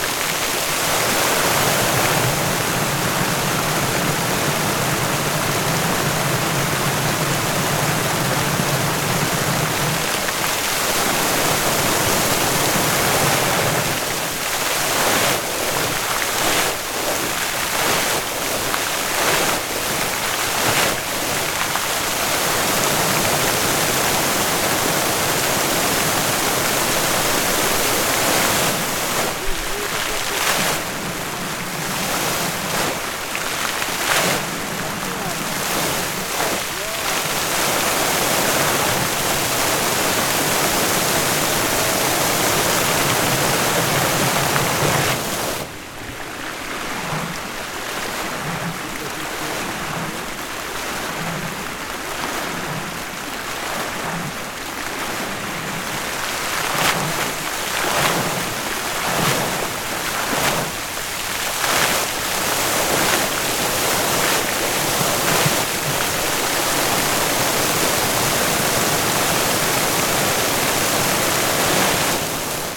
Vilnius, Lithuania - Dancing fountain in the middle of a park
A short routine of a programmed dancing fountain, captured with ZOOM H5. The strength of water streams keeps changing in different intervals, and then abruptly stops, leaving us with surrounding park ambience.